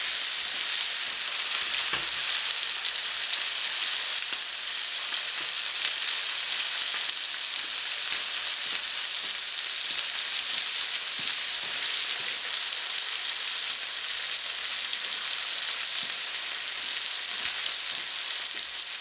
Frying potatoes in a wood burning stove in the farm. São Sebastião da Grama - SP, Brasil - Frying potatoes in a wood burning stove in the farm
Fryng potatoes in the farm. ...Maybe this sound will be usefull for some artistic purpouse, i suppose... Enjoy!
São Paulo, Região Sudeste, Brasil, 16 April 2022